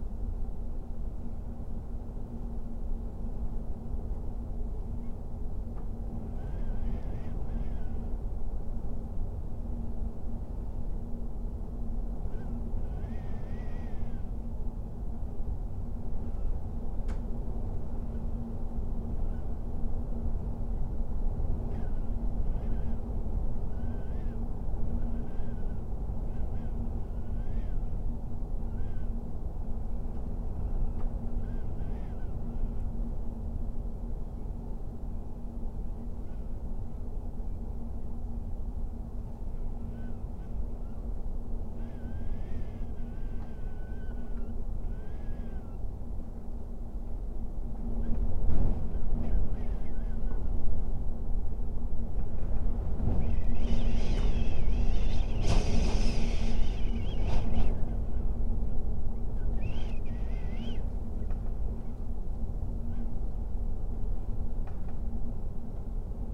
Þingeyri, Iceland, wind
storm through slightly open window
Thingeyri, Iceland